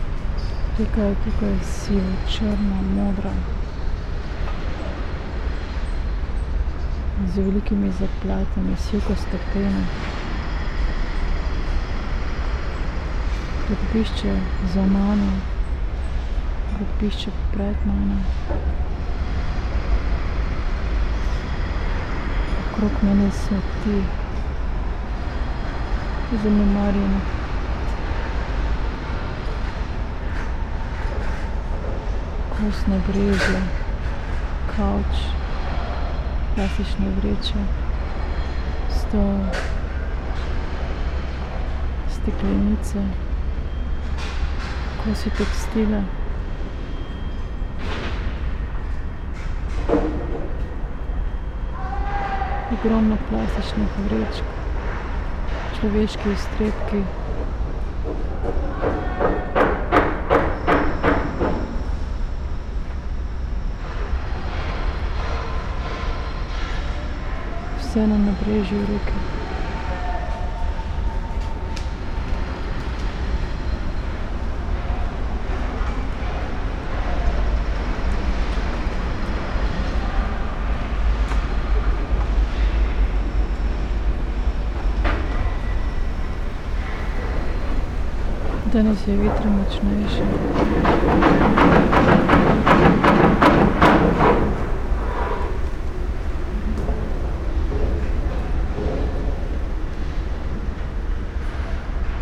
Paul-Thiede Ufer, Mitte, Berlin, Germany - rubbish site with constructions all around
spoken words, construction works, river Spree in dark blue brown with few unhealthy pale gray foam islands floating slowly, along with fallen dry leaves of early autumn ...
Sonopoetic paths Berlin